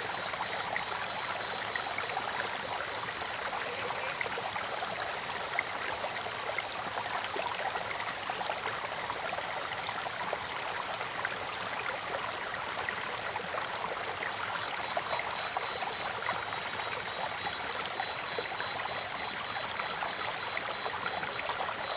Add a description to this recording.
Cicadas called, Stream sound, Frogs called, The upper reaches of the river, Bird sounds, Zoom H2n MS+XY